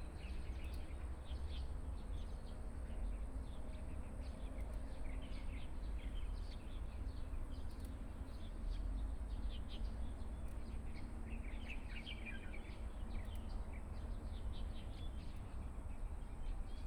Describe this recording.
Birdsong, in the Temple Square, The weather is very hot, Binaural recordings